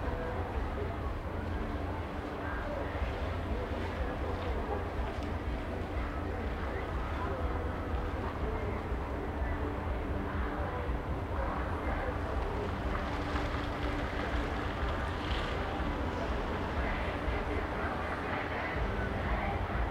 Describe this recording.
A night in central Uppsala. The clock tower strikes eleven, cars on gravel, students shouting, bicycles rattling, party music from Värmlands nation in the background. Recorded with Zoom H2n, 2CH stereo mode, deadcat on, held in hand.